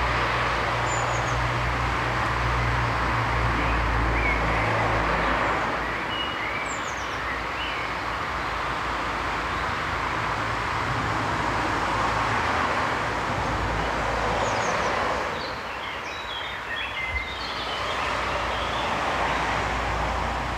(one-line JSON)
{"title": "Litvínov, Česká republika - out of koldům", "date": "2013-05-26 14:25:00", "description": "more infos in czech:", "latitude": "50.61", "longitude": "13.64", "altitude": "383", "timezone": "Europe/Prague"}